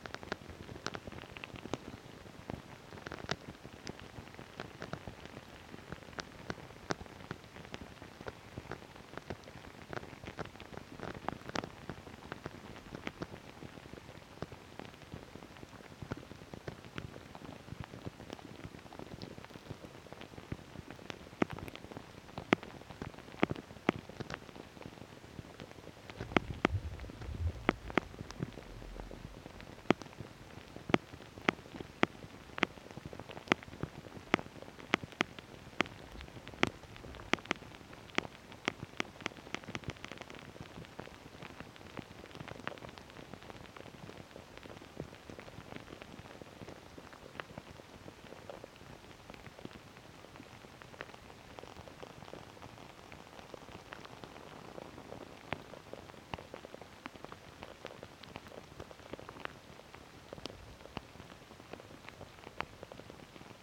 Sirutėnai, Lithuania, melting snow
Noises of the melting snow in the sun. Contact microphones.
Utenos apskritis, Lietuva, 14 March 2022, 17:10